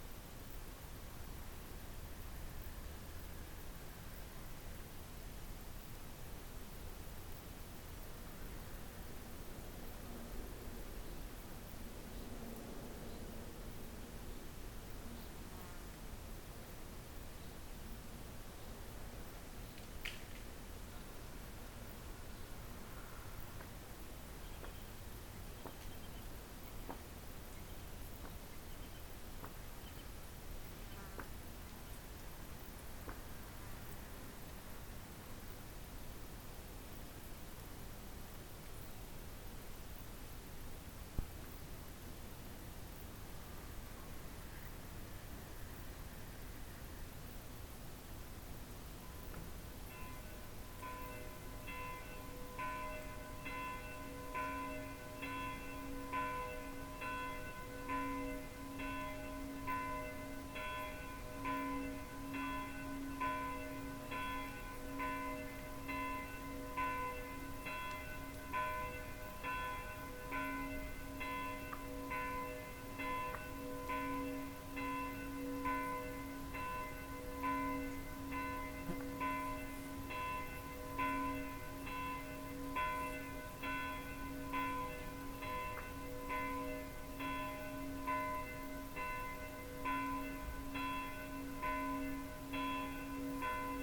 Ellend, Hangfarm, Magyarország - Meadow silence with bells of the surrounding villages
Pre-autumn silience evening with 7 o'clock bells of the village Ellend (400m) and then of the village Berkesd (3000m). We have dinner every day at 7pm, so this bell is also a sign of it.
(Bells are ringing also the next day 8am when someone from the village dies.)
This place is going to be a location for artificial soundscapes under the project name Hangfarm (soundfarm).